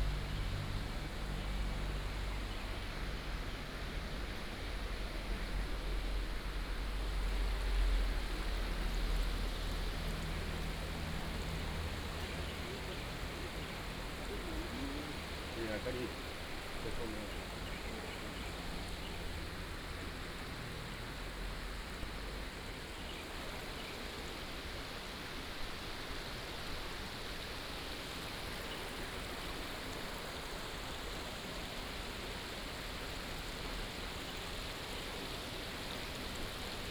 東安古橋, Guanxi Township - Under the old bridge
Under the old bridge, Traffic sound, Stream sound, sound of the birds